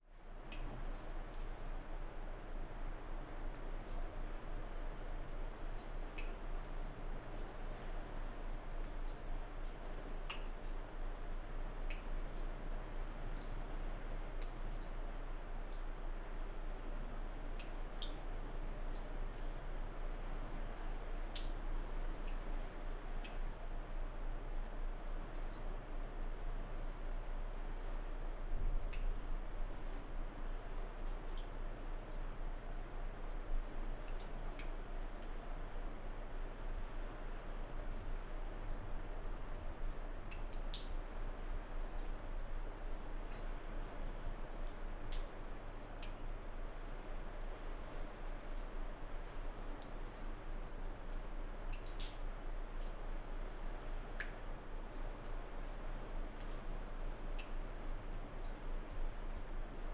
Għar Hasan cave, Ħal Far, Birżebbuġa, Malta - water drops
water drops falling from the rocks in Għar Hasan cave
(SD702, DPA4060)
3 April 2017, 15:50